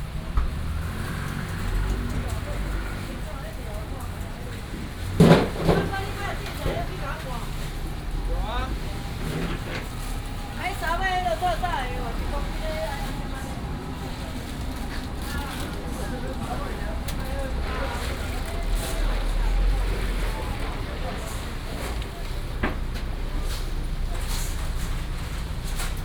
Walking in the traditional market, Traffic sound, Before the start of the business is in preparation